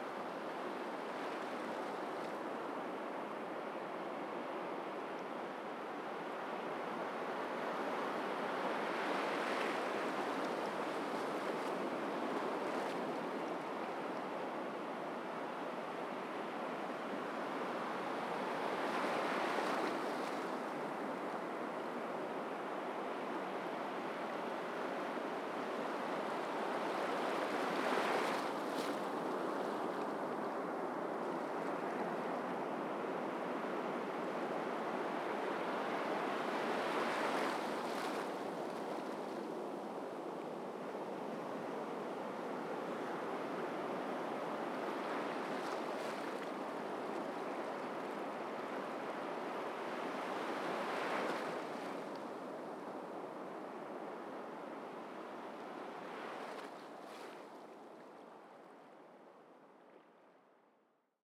Evening sea waves recorded from the beach